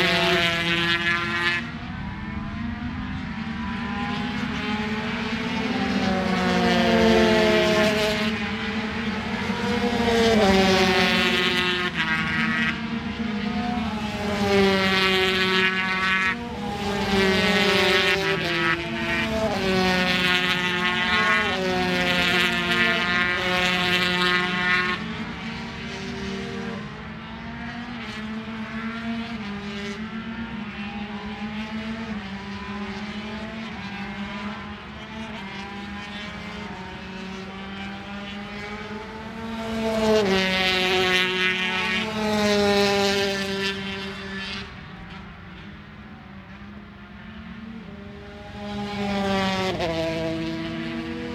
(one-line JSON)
{"title": "Unnamed Road, Derby, UK - British Motorcycle Grand Prix 2004 ... 125 free practice ...", "date": "2004-07-23 09:00:00", "description": "British Motorcycle Grand Prix 2004 ... 125 free practice ... one point stereo mic to minidisk ... date correct ... time optional ...", "latitude": "52.83", "longitude": "-1.37", "altitude": "74", "timezone": "Europe/London"}